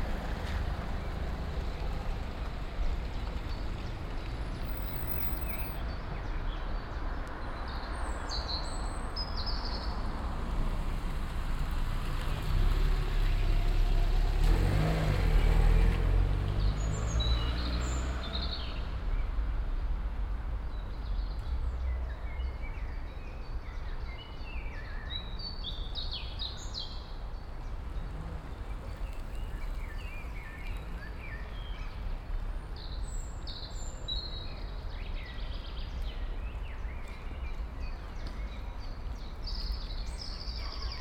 Soundscape of a more quiet part of town. A distant siren, birds (blackbird, robin, sparrow, chaffinch, pigeon, gull), barking dogs, a few slow cars, pedestrians, children, bicycles, chimes of a church bell. Binaural recording, Sony PCM-A10, Soundman OKM II classic microphone with ear muff for wind protection.
14 May 2021, ~17:00